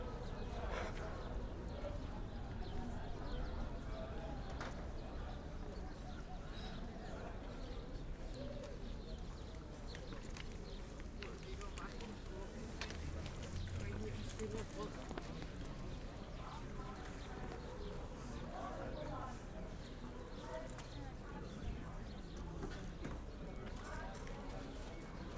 Soundscapes in the pandemic: Maybachufer market, entrance area
(Sony PCM D50, Primo EM172)